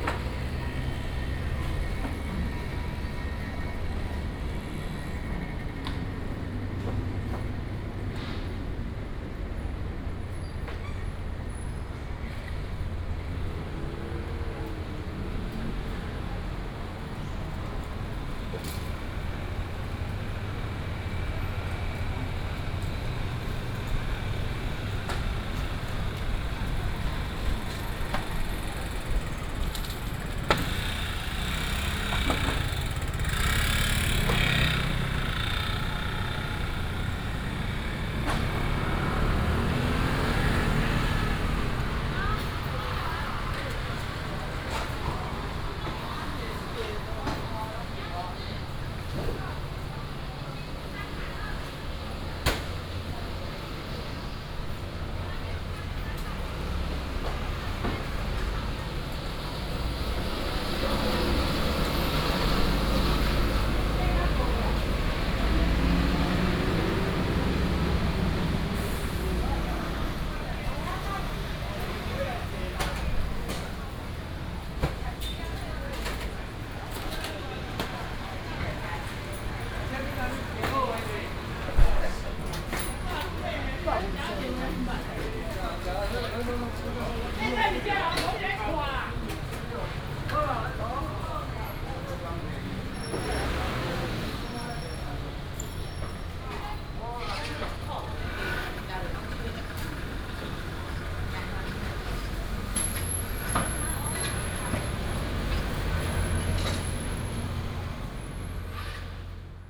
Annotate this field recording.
walking in the Old traditional market, traffic sound